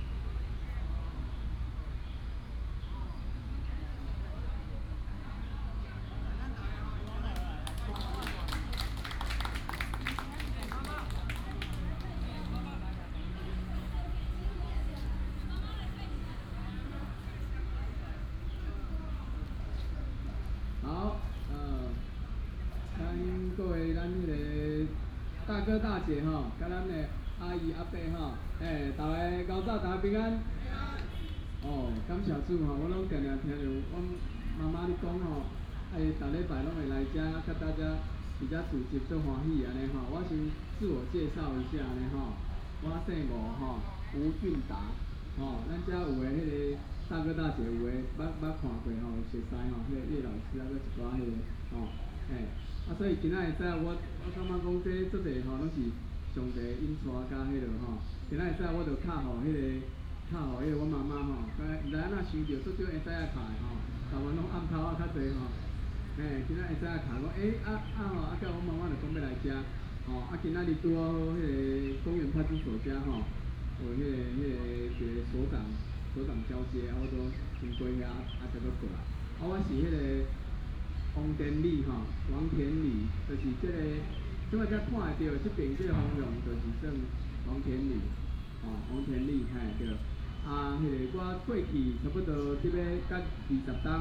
Missionary, Bird sound, Traffic sound
18 April 2017, ~11:00